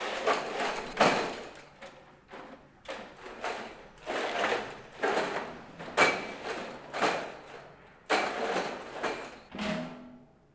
Getränkekisten in der Platzgasse - handling with drinking boxes
a delivery service delivering drinks to a restaurant